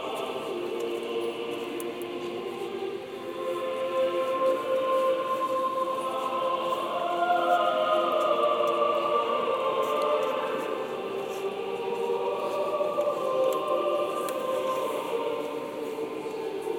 Исаакиевская пл., Санкт-Петербург, Россия - Christmas in St. Isaacs Cathedral
Christmas in St. Isaac's Cathedral
Sankt-Peterburg, Russia